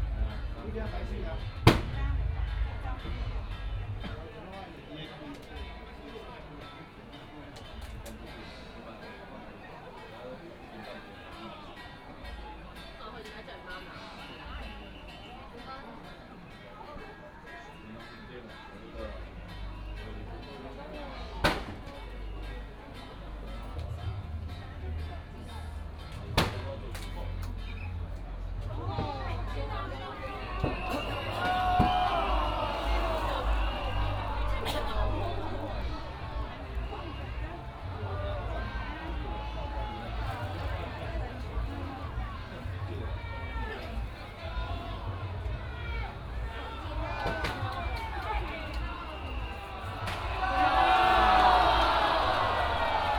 Walk in the alley, Matsu Pilgrimage Procession, Crowded crowd